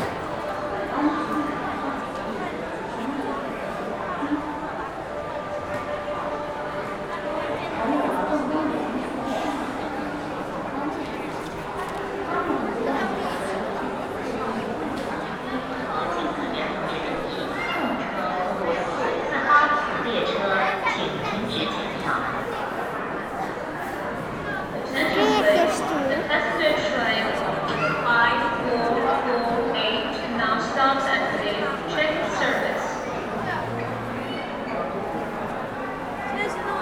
Zhabei, Shanghai, China - Shangai Train central station
general ambient of Shangai train station
10 April 2010, 19:57